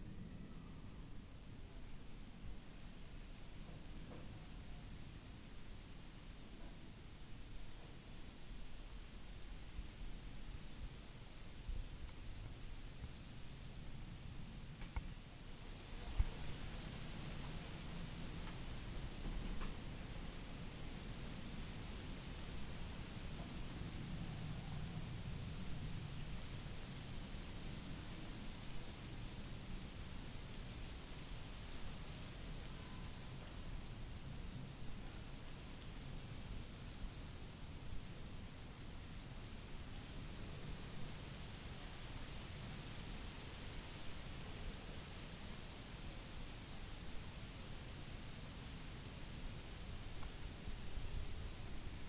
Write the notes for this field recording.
(description in English below), In de binnentuin van een woonblok staat een grote populier. De boom maakt een hard ritselend geluid. Een van de bewoonsters van dit blok vindt dit geluid prachtig en rustgevend. De populier is een natuurlijk geluid en maakt de stilte tastbaar. There's a large poplar tree in the courtyard of this residential block. The tree makes a strong rustling sound. An inmate of this building experiences this sound as beautiful and relaxing. The poplar is a natural sound and makes the silence palpable.